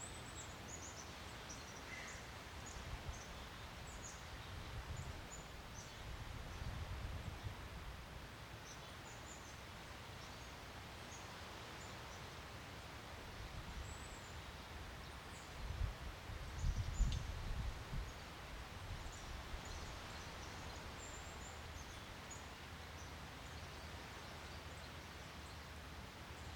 At Picnic Point in north Edmonds, there's a pedestrian walkway to the beach over the tracks that run along the waterfront, but there's no at-grade vehicle crossing, so the trains don't have to slow down or even blow their whistles as they zoom past. This short, short freight train -- about a dozen cars -- can be heard blowing its horn a couple miles down the tracks at the nearest grade crossing, and then nothing -- until suddenly it bursts around the corner, wheels singing at full volume as the tracks curve sharply around the point. Just as suddenly the train has passed, and gently recedes into the distance.
Snohomish County, Washington, United States of America